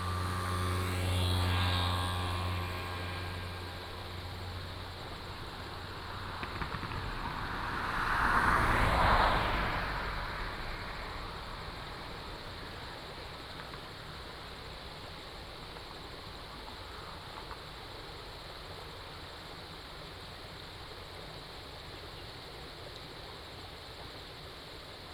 樂合里, Yuli Township - In the stream edge
Stream, Crowing sound, Birdsong, Traffic Sound
Hualien County, Taiwan, 9 October 2014, ~07:00